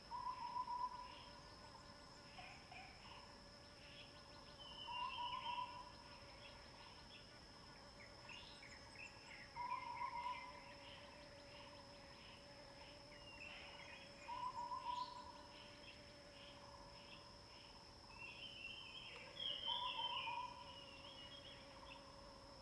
Hualong Ln., Yuchi Township 魚池鄉 - Bird and Frog sounds
Bird sounds, Frog sounds
Zoom H2n MS+XY